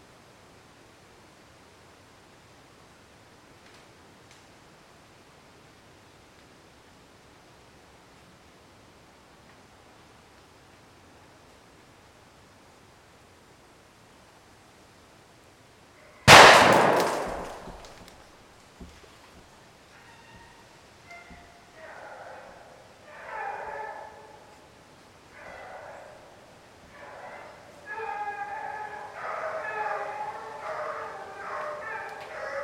Seigy, France - Hunters and dogs
Wind Underwood in Seigy France, Approaching hunters and dogs barking
by F Fayard - PostProdChahut
Fostex FR2, MS Neuman KM 140-KM120